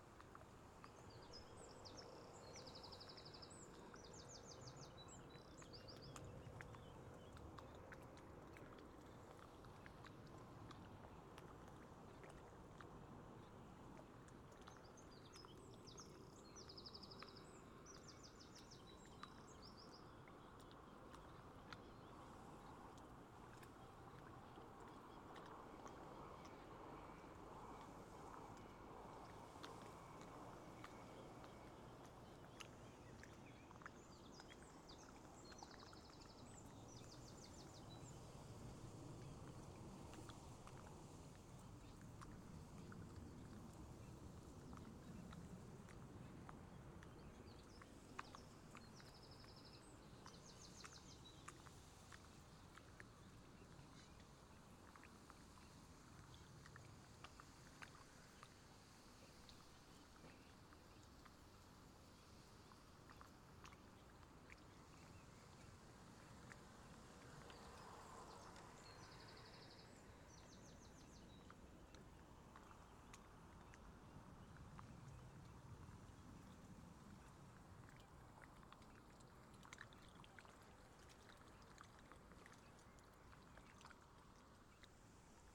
Poelestein, Abcoude, Netherlands - Lakeside Abcoude
Originally recorded with SPS200 A-Format microphone. Afterwards decoded to binaural format for listening purposes. Soft lapping of little waves against the shore. Distant highway.
12 July 2018